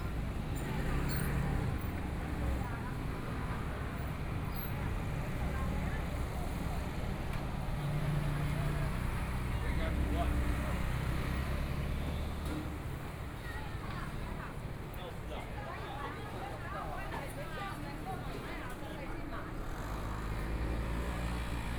花蓮市國富里, Taiwan - Walking through the market
Walking through the market, Traffic Sound
Binaural recordings
Zoom H4n+ Soundman OKM II